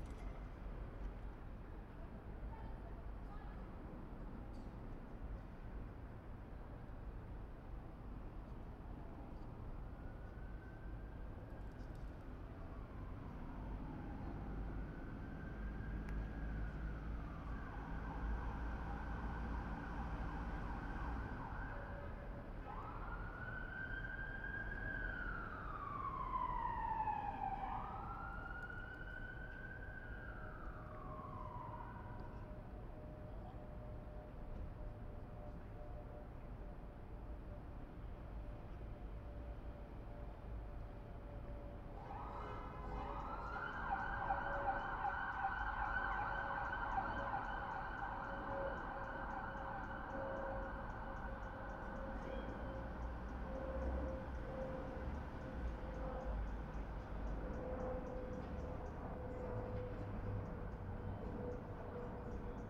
John Islip St, Westminster, London, UK - Street Ambience - Parade Ground, Millbank
Recording street ambience in Chelsea College of Art parade ground using ambisonic microphone by reynolds microphones
8 May 2019, 16:30